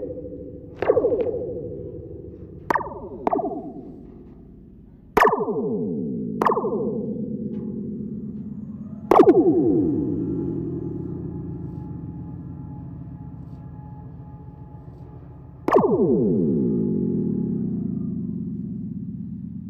Green Bridge, Brisbane Cable 4